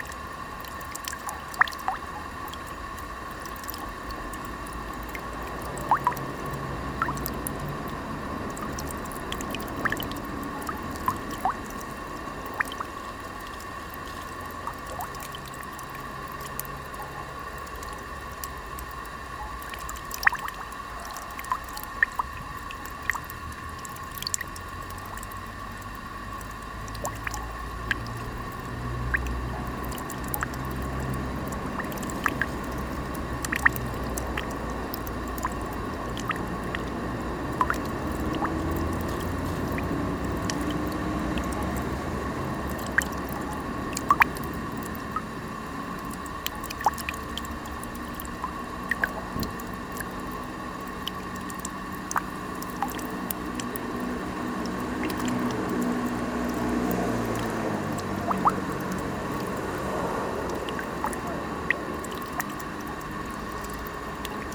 Asola MN, Italy - drops from a fountain
spare dops from a fountain in a public park